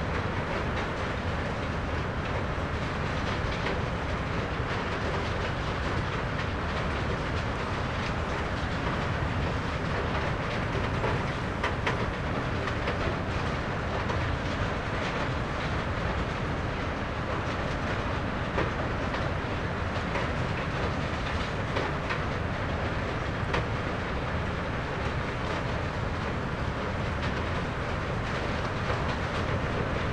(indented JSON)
{
  "title": "Steinbruch Steeden, Deutschland - lime stone quarry ambience, stone shredder",
  "date": "2022-02-07 11:25:00",
  "description": "lime stone quarry ambience, sound of stone shredder at work\n(Sony PCM D50, Primo EM272)",
  "latitude": "50.43",
  "longitude": "8.13",
  "altitude": "178",
  "timezone": "Europe/Berlin"
}